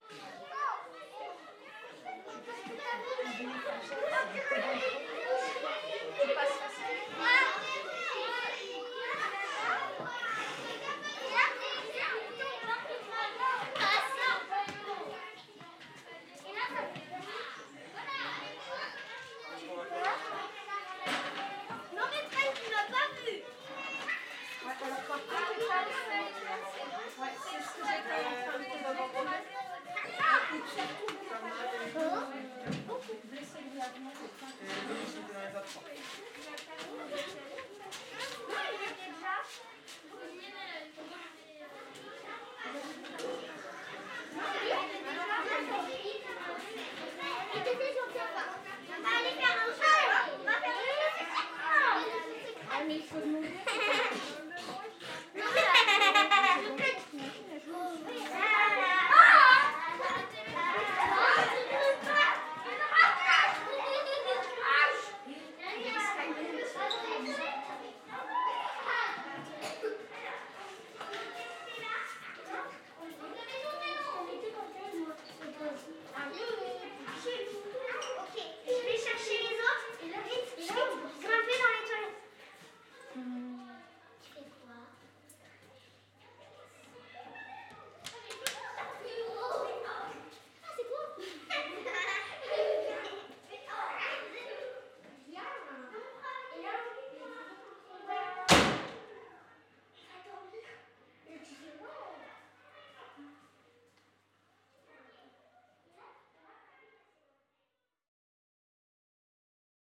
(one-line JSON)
{"title": "Champsecret, France - Lheure de manger", "date": "2021-03-18 12:00:00", "description": "Just a moment with children before they go eating.", "latitude": "48.61", "longitude": "-0.55", "altitude": "217", "timezone": "Europe/Paris"}